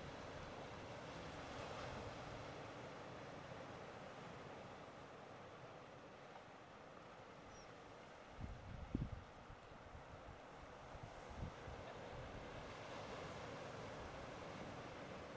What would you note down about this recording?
The wind, the sea, people coming down to the beach looking at the huge castle on the rock. Very quiet and meditative place. Rec with Tascam DR-05 on the cliff in front of the sea.